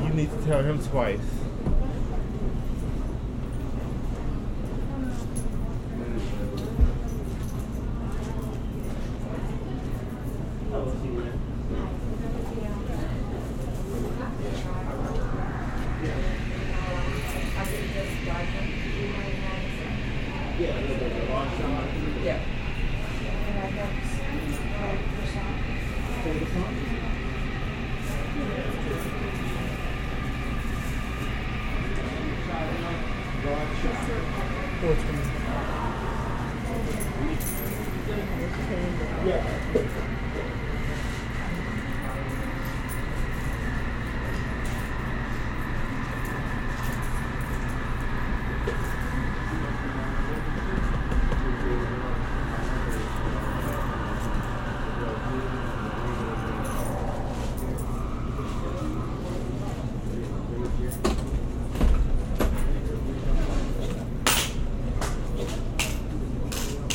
The best thing about being adult is drinking chocolate for breakfast.